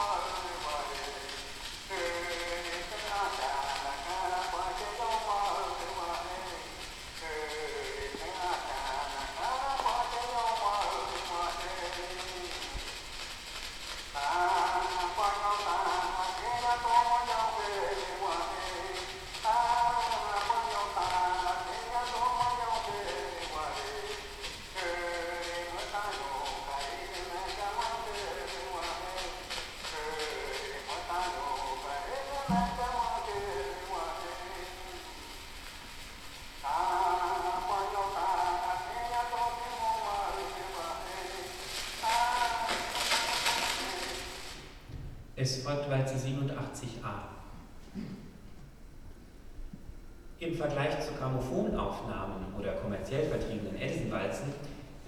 theater play about old ethnographic phonograph recordings
(amazonas - eine phonographische anstrengung)